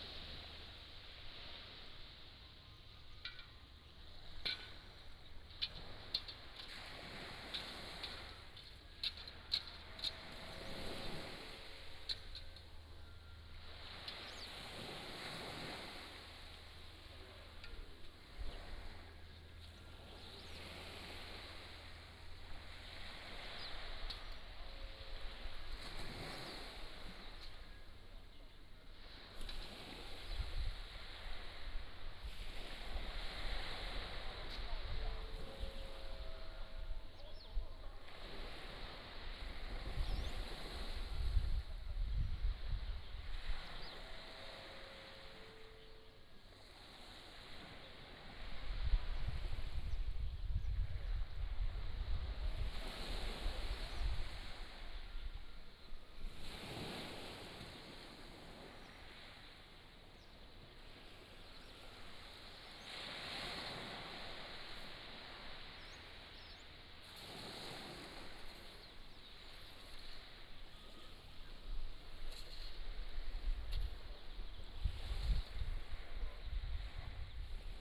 Nangan Township, Taiwan - On the coast
Sound of the waves, Electric box noise
連江縣, 福建省, Mainland - Taiwan Border, 15 October 2014